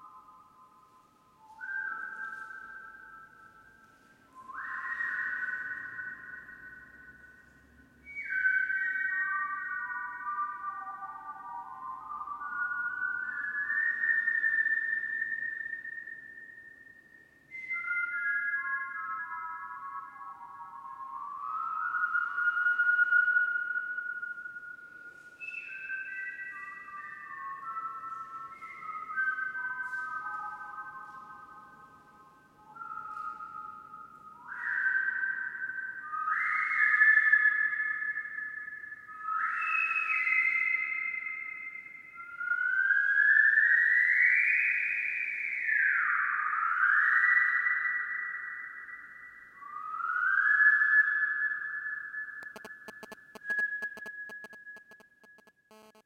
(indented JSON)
{
  "title": "La Chaise-Dieu, France - salle de l'écho",
  "date": "2013-05-02 16:06:00",
  "description": "une pièce carrée, voutée, en pierre... réputée pour la qualité de son acoustique (près de 4 secondes de réverbération). ici quelques jeux de voix, de sifflet pour faire sonner différentes fréquences del'espace.",
  "latitude": "45.32",
  "longitude": "3.70",
  "altitude": "1076",
  "timezone": "Europe/Paris"
}